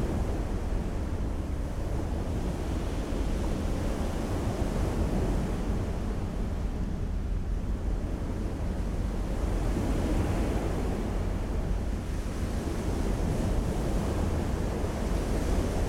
{"title": "Kiel ship and helicopter traffic", "description": "sounds of the Baltic shore with almost continuous ship and air traffic", "latitude": "54.41", "longitude": "10.19", "altitude": "6", "timezone": "Europe/Tallinn"}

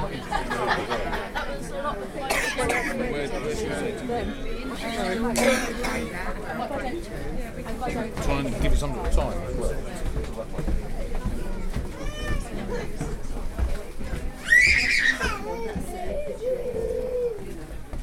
{"title": "Jacksons of Reading, Jacksons Corner, Reading, UK - Entering the auction at Jackson's of Reading, and attempting to pick up a bidding card", "date": "2014-01-04 10:05:00", "description": "Jacksons of Reading was a family-owned department store in Reading opened in 1875 by Edward Jackson. The store was kept in the family, and traded goods to the public until December 2013. After its closure, in January 2014, all of the old shop fittings and fixtures were offered up for sale by public auction. This is the sound of me entering the auction from King's Walk, going into the labyrinthine system of rooms; and fighting my way to the offices to pick up my bidding card. You can get some sense of the numbers of people who turned up for the auction, in the level of chatter! Recorded on sound professional binaural microphones, stealthily worn in the crowd to document this momentous, collective experience of huge change and loss in the locality. This was recorded at the start of the auction, and various recordings follow in a sequence, documenting some of the historic moments that occurred while I was there, hoping to secure lots 74 and 75 (which I did not do!)", "latitude": "51.46", "longitude": "-0.97", "altitude": "45", "timezone": "Europe/London"}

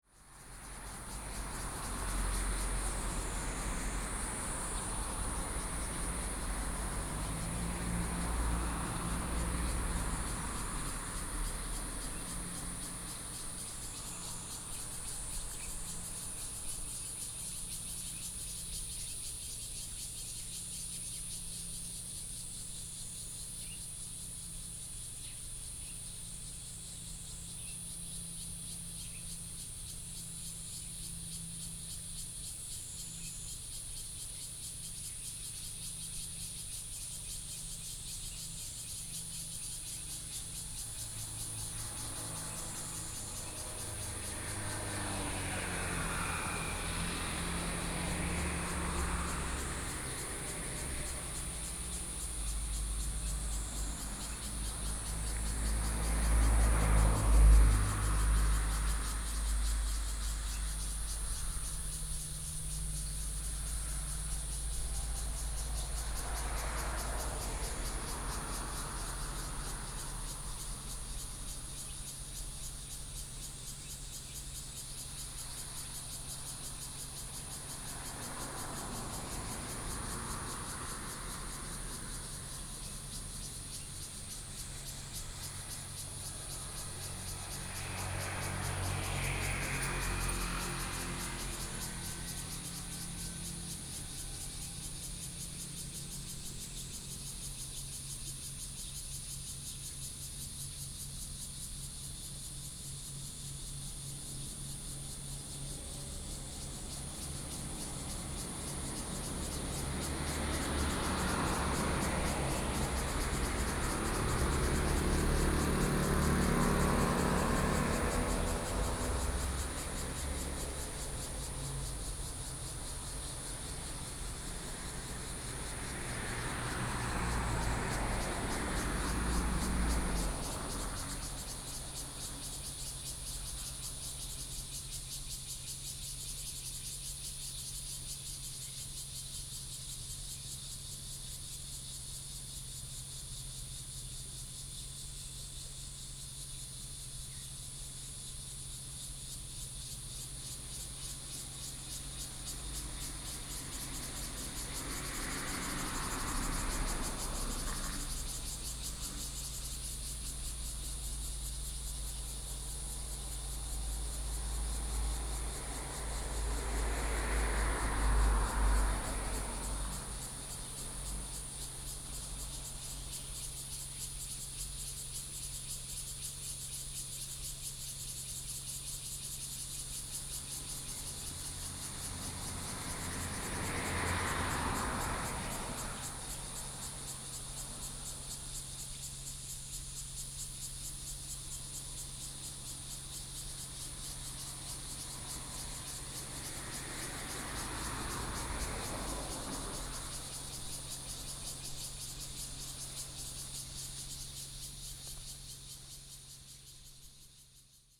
{"title": "干城村, Ji'an Township - Under the tree", "date": "2014-08-28 09:48:00", "description": "Under the tree, Traffic Sound, Cicadas sound, Very hot days", "latitude": "23.95", "longitude": "121.52", "altitude": "108", "timezone": "Asia/Taipei"}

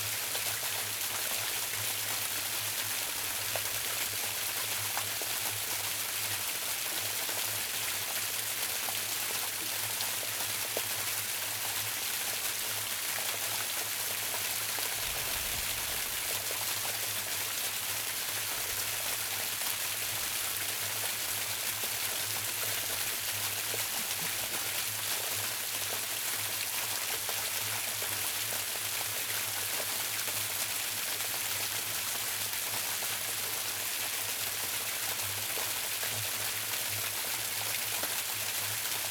{"title": "Fengbin Township, Hualien County - small Waterfall", "date": "2014-10-09 13:19:00", "description": "In the side of the road, Water sound, small Waterfall\nZoom H2n MS+XY", "latitude": "23.47", "longitude": "121.47", "altitude": "49", "timezone": "Asia/Taipei"}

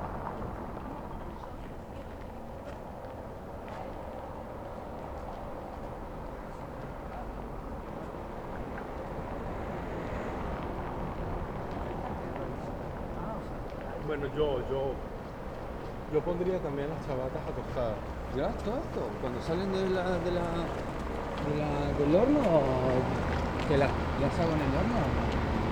{"title": "Berlin: Vermessungspunkt Maybachufer / Bürknerstraße - Klangvermessung Kreuzkölln ::: 12.08.2010 ::: 03:19", "date": "2010-08-12 03:19:00", "latitude": "52.49", "longitude": "13.43", "altitude": "39", "timezone": "Europe/Berlin"}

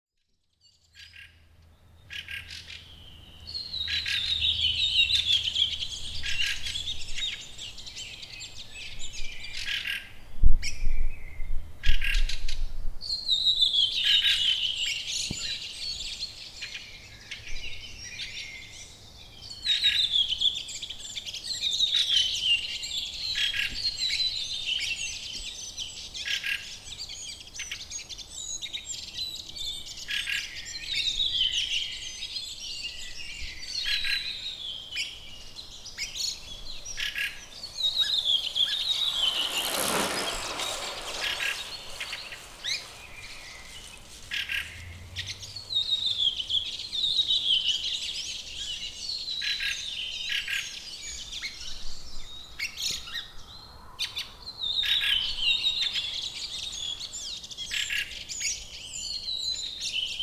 {"title": "Korkiasaari, Oulu, Finland - Birds of Korkiasaari", "date": "2020-06-08 22:55:00", "description": "Birds singing on a warm evening in Korkiasaari, Oulu. It's almost 11 PM but the sun hasn't gone down yet. A mosquito lands on the microphone and a cyclist rides by. Zoom H5 with default X/Y capsule.", "latitude": "65.02", "longitude": "25.45", "timezone": "Europe/Helsinki"}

